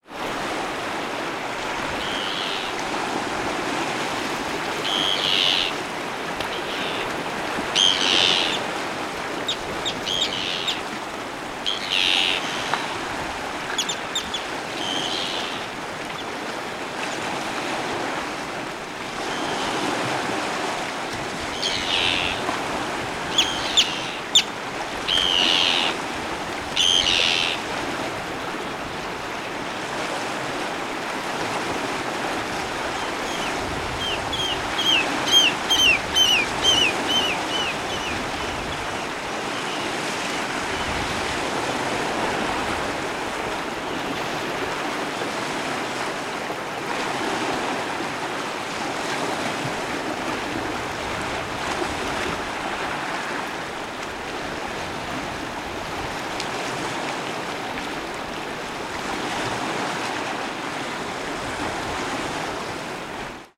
Boddam, Dunrossness, south Shetland - Oystercatchers and Terns (I think?)
After visiting the Croft House Museum, I was so struck to see sheep grazing right beside the sea that I wanted to document the maritime sonic texture of the situation. Seabirds are a huge feature of the soundscape in Shetland, and I think in this recording, what you can hear are Terns and Oystercatchers. Recorded with Audio Technica BP4029 and FOSTEX FR-2LE.